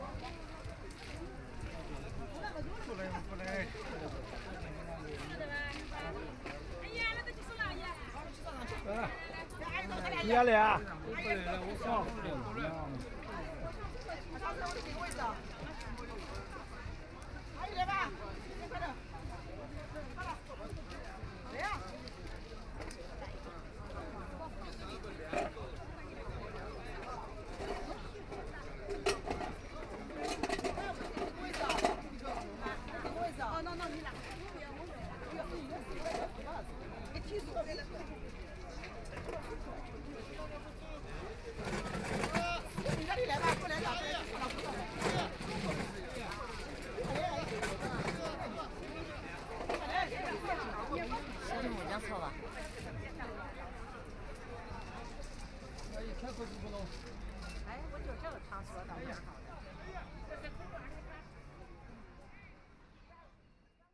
Many elderly people gathered in an area to play mahjong, Binaural recording, Zoom H6+ Soundman OKM II